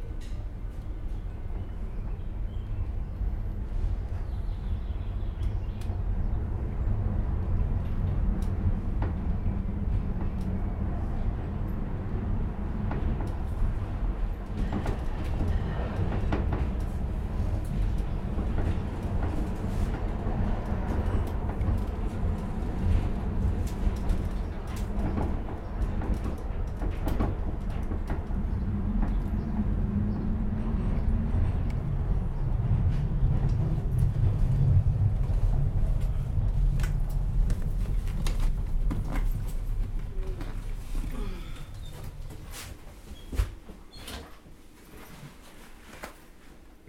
Bern, Marzilibahn
Marzilibahn von Bundeshaus ins Marziliquartier an der Aare, Gewichtsseilbahn, Billettbezug am Schalter